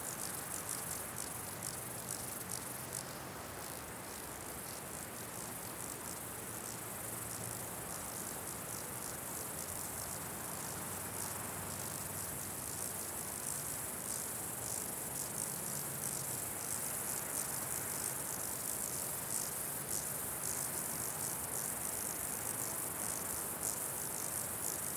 Bonneval-sur-Arc, France - Torrent in the Alps
Mountain Alps summe locusts and air and torrent Arc.
by F Fayard - PostProdChahut
Tascam DR44
Auvergne-Rhône-Alpes, France métropolitaine, France